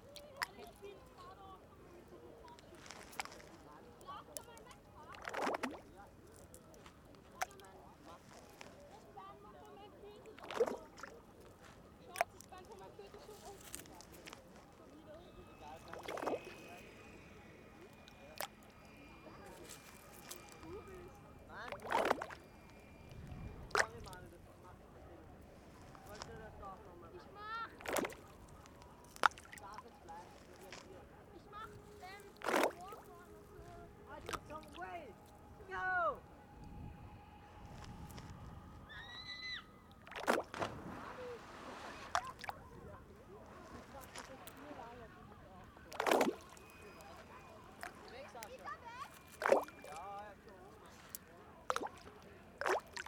Flughfeld Aspern swimmers and stones, Vienna
artificial lake at the new development site at the former Flughfeld Aspern